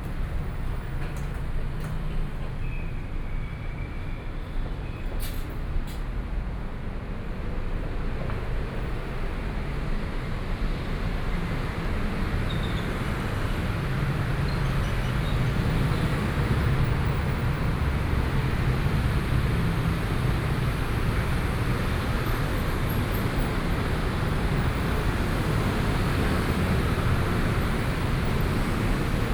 Minquan W. Rd., Taipei City - walking on the Road
walking on the Road, Traffic Sound, Environmental sounds
Binaural recordings
Zhongshan District, Taipei City, Taiwan